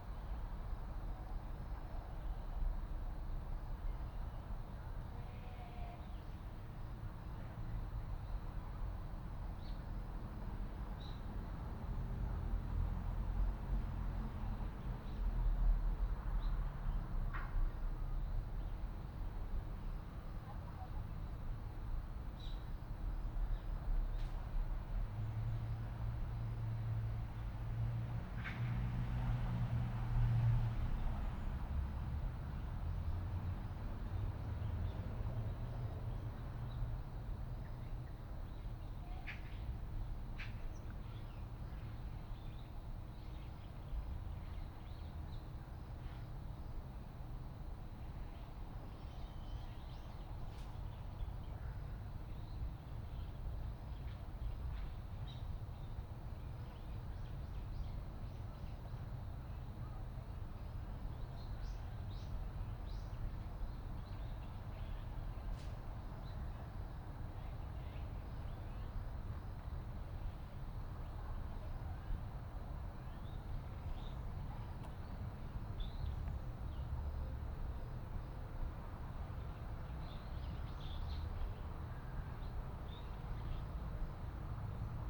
대한민국 서울특별시 서초구 방배동 산17-5 - Bangbae-dong, Seoripul Park
Bangbae-dong, Seoripul Park
방배동 서리풀공원